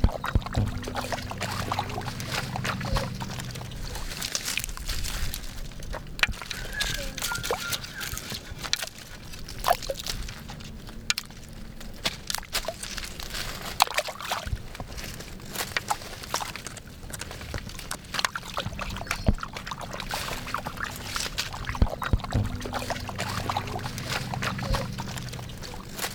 Currumbin Waters QLD, Australia - Stones splashing
Children having fun throwing stones into swampy water and recording the sounds of splashing.
Part of a September holiday 'Sounds in Nature' workshop run by Gabrielle Fry, teaching children how to use recording equipment to appreciate and record sounds in familiar surroundings. Recorded using a Rode NTG-2 and Zoom H4N.
28 September 2015